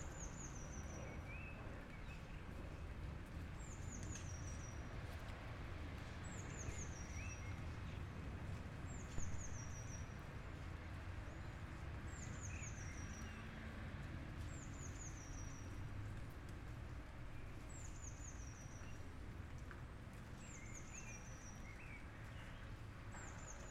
Tierney Rd, London, UK - Sunday Street Ambience
Recorded on a rainy sunday in London, Streatham/Brixton Hill